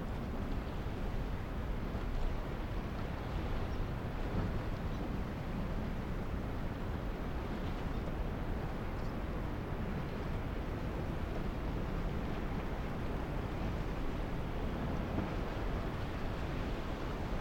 La mer et quelques oiseaux. ambience vide.
Sea and somes birds.Quiet soundscape.
April 2019.
Trégastel, France, 22 April 2019